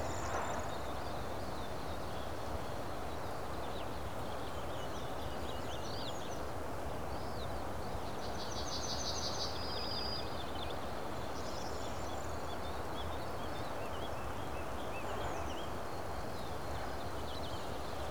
Morasko, Poligonowa Road - forest regularities
when listened to carefully despite the first impression of chaotic sound structure turns out to be rather organized. most birds sing in regular intervals.